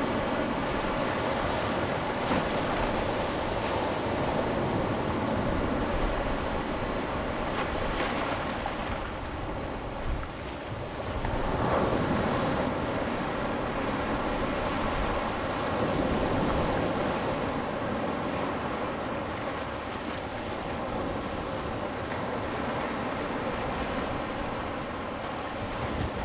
Shalun, Dayuan Dist., Taoyuan City - Sound of the waves
Sound of the waves
Zoom H2n MS+XY
Taoyuan City, Dayuan District, 桃22鄉道, November 2016